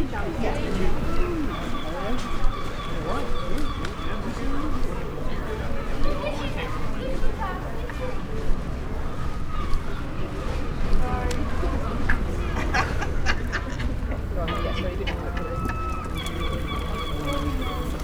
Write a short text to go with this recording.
Recorded during a walk along the High Street shopping area with snatches of conversations, street entertainers and the changing ambient image as I visit locations and change direction.